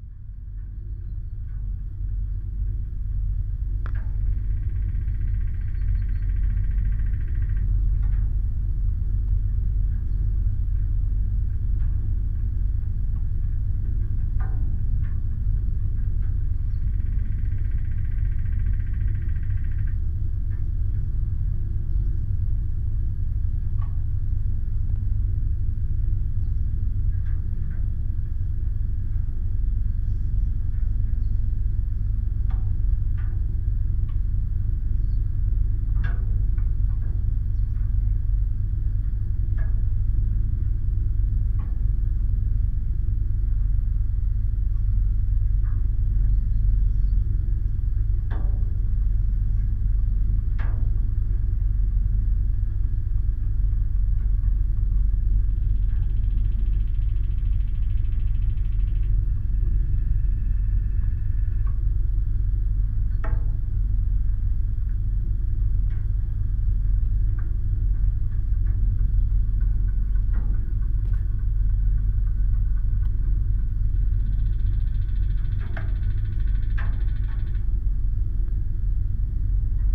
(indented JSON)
{
  "title": "Utena, Lithuania, loops of rusty wire",
  "date": "2018-09-20 18:50:00",
  "description": "debris. some loops of rusty wire. contact mics.",
  "latitude": "55.52",
  "longitude": "25.58",
  "altitude": "98",
  "timezone": "Europe/Vilnius"
}